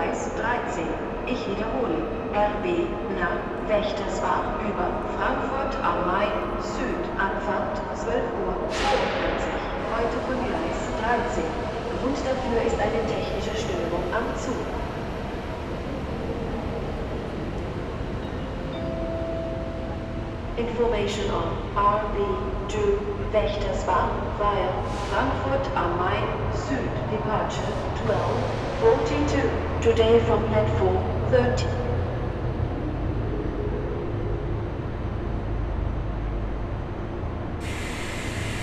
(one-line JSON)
{"title": "Frankfurt (Main) Hauptbahnhof, Gleis - 27. März 2020 Gleis 10 11", "date": "2020-03-27 12:34:00", "description": "A train is arriving. But not very many people are leaving the train. An anouncement just stops without telling when the train to Neuwied is leaving, kind of significant for the situation. Perhaps there is no 'Abfahrt'... Later the sound for the anouncement is repeted twice, to reassure the listeners? The microphone walks back to the platform that connects all platforms. It is a little bit more busy. A lot of anouncements for other trains are made. There are a lot of suitcases, but different from the days before Corona you can count them. Another train is arriving. Some people are leaving, again a lot of trolleys. Some passengers are arguing. An anouncement anounces a train to Darmstadt, on the other track a train to Berlin is anounced by text, but the text vanishes and the train to Berlin on track 12 becomes the train to Darmstadt formerly on track 13. Nearly nobody is boarding. At least the train from Wächtersback is arriving.", "latitude": "50.11", "longitude": "8.66", "altitude": "108", "timezone": "Europe/Berlin"}